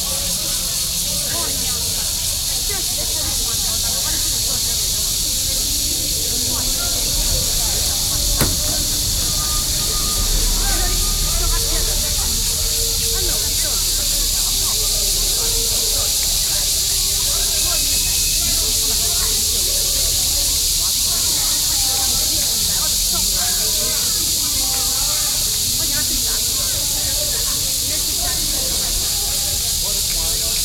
Guānhǎi Boulevard, Bali District, New Taipei City - In large trees
Sound of holiday and People to escape the hot weather, Cicadas, In the shade, Binaural recordings
Bali District, New Taipei City, Taiwan